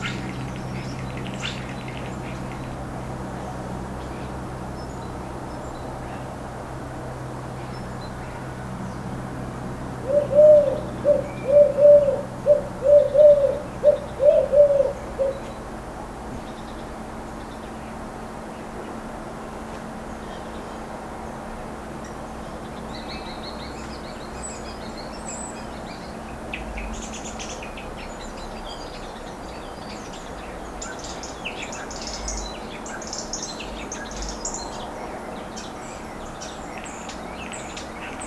Rue Michaulane, Précy-sur-Oise, France - Bird ambiance at noon
Backyard bird ambiance at noon.
(Zoom H5 + Rode M5 MP)
France métropolitaine, France, 10 January 2022